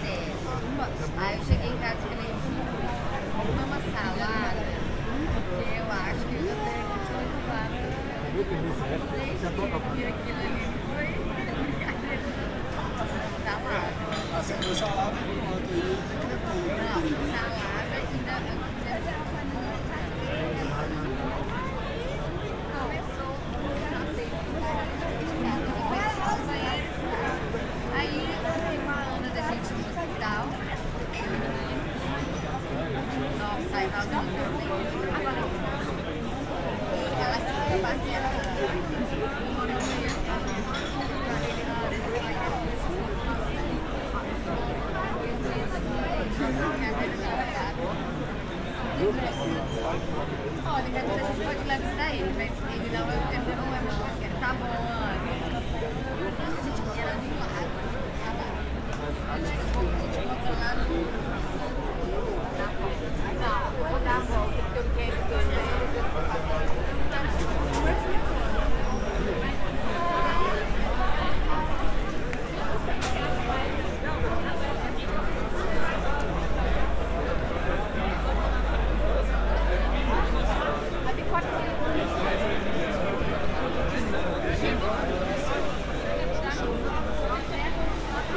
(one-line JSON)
{"title": "Brüsseler Platz, Köln, Deutschland - midnight ambience", "date": "2014-07-18 00:05:00", "description": "World Listening Day: midnight ambience at Brüsseler Platz, Cologne. Many people are hanging out here in warm summer nights, which provokes quite some conflicts with neighbours.\n(live broadcast on radio aporee, captured with an ifon, tascam ixj2, primo em172)", "latitude": "50.94", "longitude": "6.93", "altitude": "57", "timezone": "Europe/Berlin"}